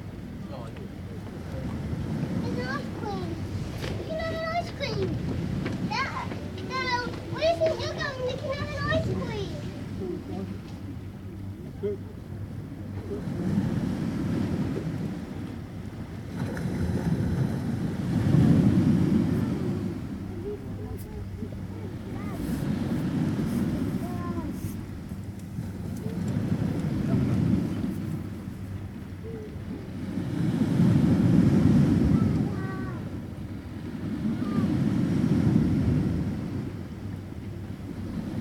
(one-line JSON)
{"title": "Newtons Cove, Weymouth, Dorset, UK - Sitting on steps leading down to Newtons Cove", "date": "2012-05-16 09:34:00", "latitude": "50.60", "longitude": "-2.45", "altitude": "5", "timezone": "Europe/London"}